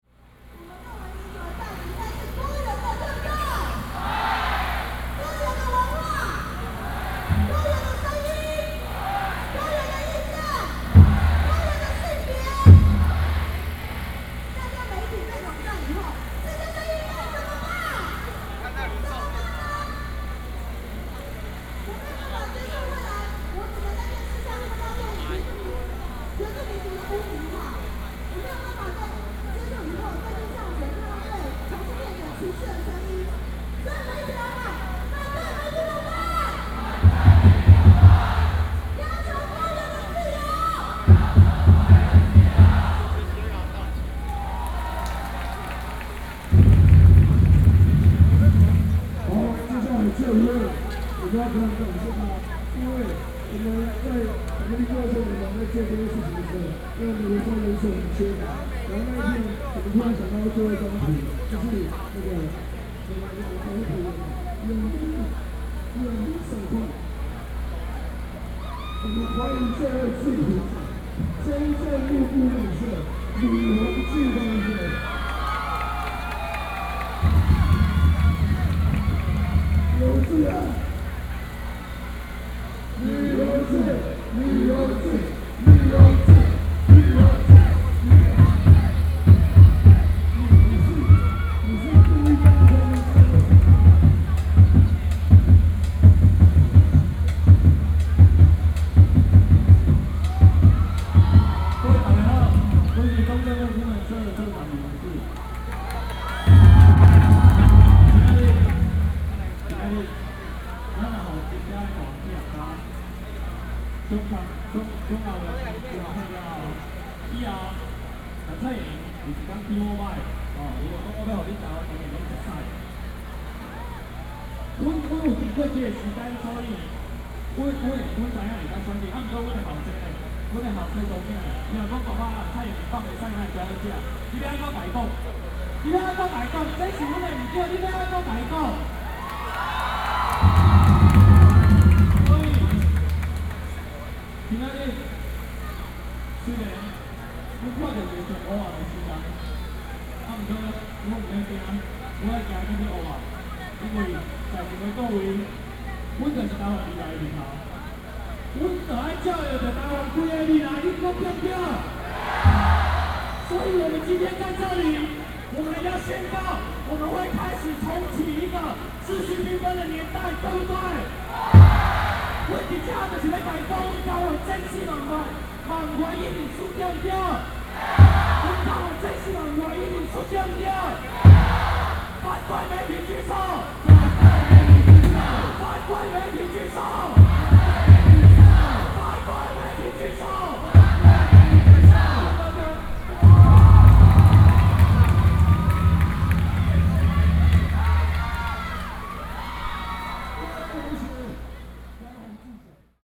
Protest, Speech and the crowd shouting, (Sound and Taiwan -Taiwan SoundMap project/SoundMap20121129-3), Binaural recordings, Sony PCM D50 + Soundman OKM II